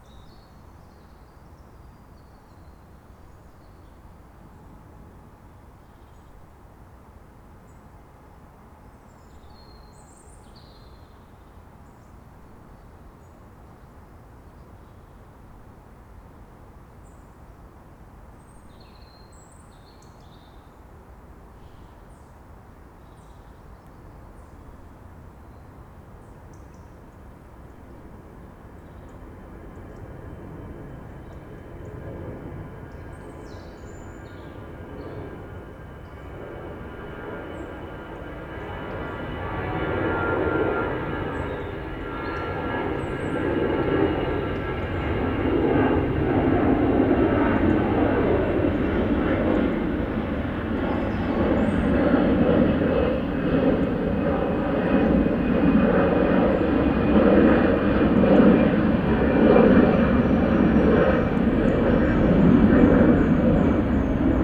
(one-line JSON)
{"title": "Campolide, Portugal - Pedreira da Serafina", "date": "2014-11-05 17:31:00", "description": "Recorded at an old quarry. Serafina.Lisbon.", "latitude": "38.73", "longitude": "-9.18", "altitude": "125", "timezone": "Europe/Lisbon"}